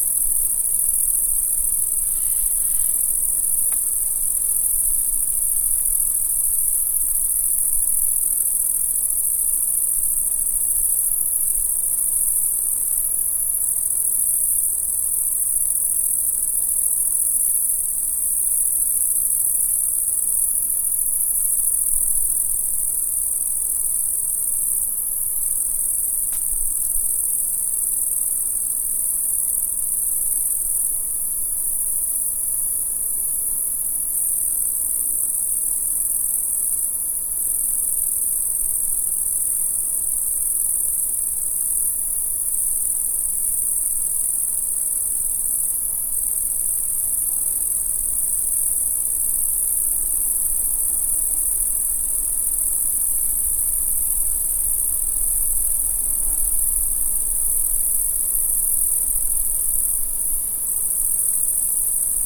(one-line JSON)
{"title": "Unnamed Road, Horní Libchava, Česko - grasshoppers", "date": "2020-07-31 16:50:00", "description": "The sound of grasshoppers on a forest road in the summer sun. Tascam DR-05, build microphones", "latitude": "50.73", "longitude": "14.50", "altitude": "310", "timezone": "Europe/Prague"}